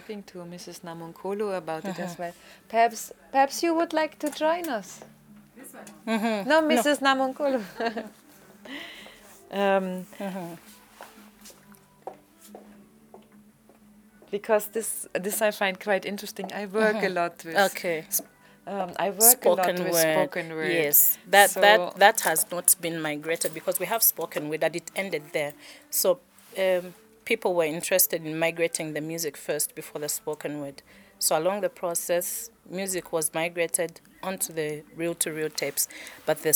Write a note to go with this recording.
… continuing our archive conversations…. Mrs. Martha Chitalunyama, senior information resource officer, adds details about the content, transcription and publication practices of the ZNBC archives. For example, the video publications of ceremonies can often be accompanied by audio CDs of early recordings with Zambian artists from the archive’s vinyl collection. Broadcast technology was digitalized about 10 years ago leaving much of the archive’s cultural heritage currently unaired. Transcription services are slow with only one record player, which is in the dubbing studio, and thus, public access to the rich history of Zambian music and recordings remains a trickle. A large archive of spoken word recordings including traditional storytelling remains entirely untouched by transcriptions. There is as yet no online reference nor catalogue about these rich cultural resources. The entire playlist of recordings from ZNBC audio archives can be found at: